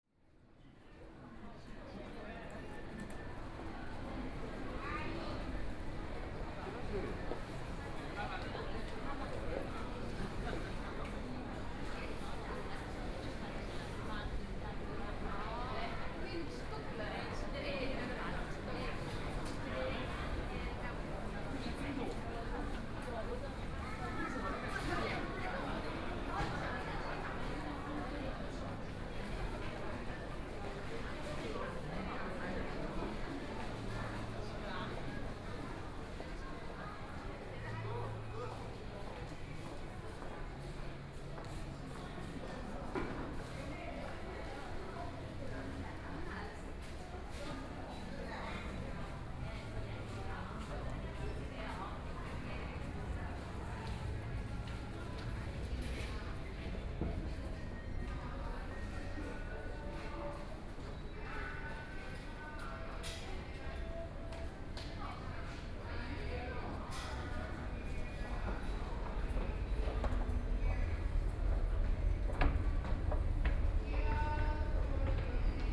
Walking in the station, Exit of the station, A beggar is singing, Binaural recording, Zoom H6+ Soundman OKM II
Jing'an, Shanghai, China, 23 November 2013